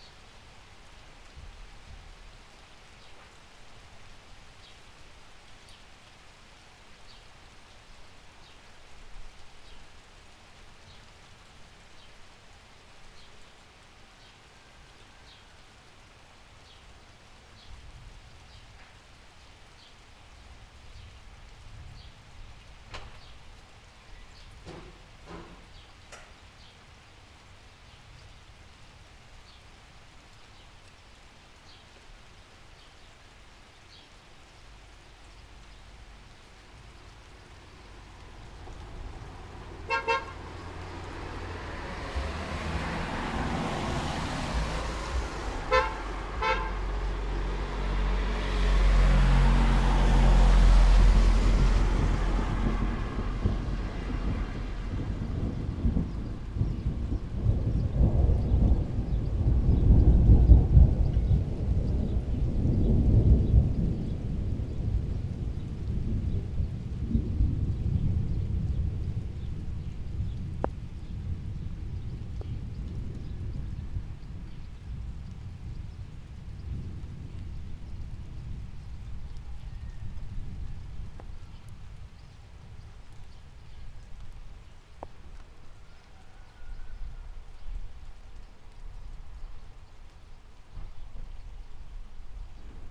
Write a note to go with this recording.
Afternoon thunderstorm. the cars honk on their way uphill to warn hikers.